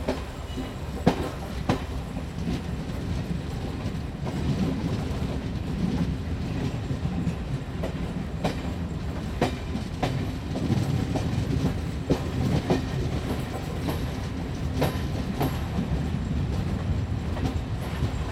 Kampur Station
Ambiance gare centrale de Kampur
Uttar Pradesh, India, 14 March 2003, 17:00